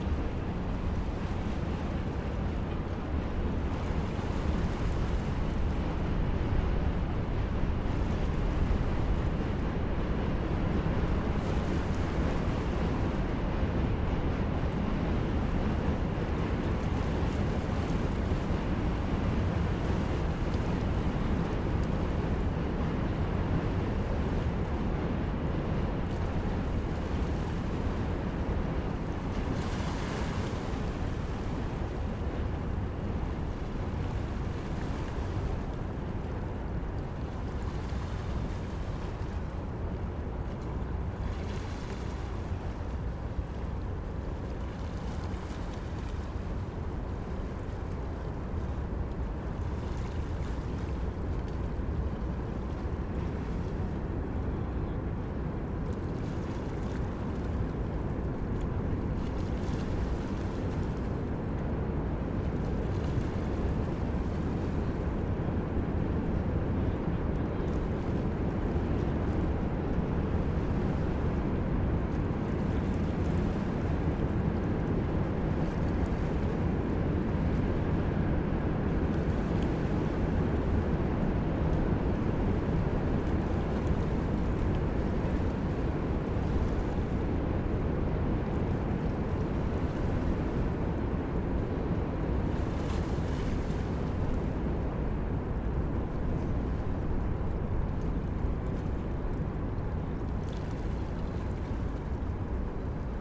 Plymouth St, Brooklyn, NY, USA - Brooklyn Bridge Park
Brooklyn Bridge Park.
Sounds of the river mixed with the traffic from the bridge.
14 February, 14:00